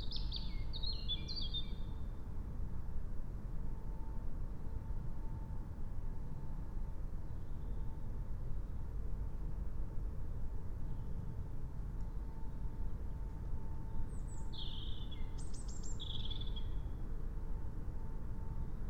21:16 Lingen, Emsland - forest ambience near nuclear facilities
Niedersachsen, Deutschland, 26 April 2022, 21:16